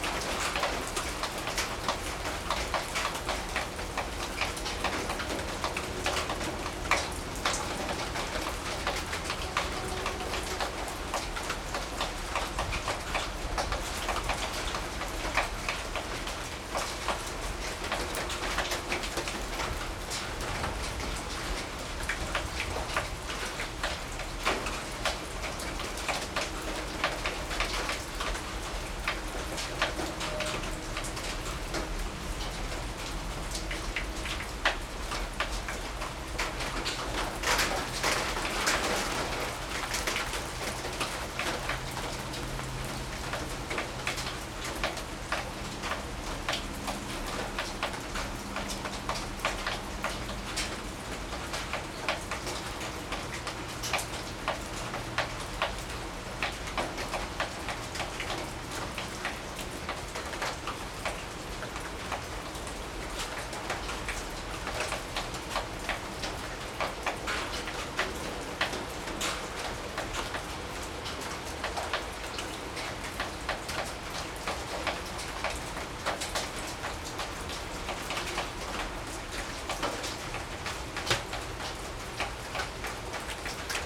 Salina St., Blackland, TX, USA - Rain after Eclipse
Recorded with a pair of DPA4060s and a Marantz PMD661
9 March, 19:30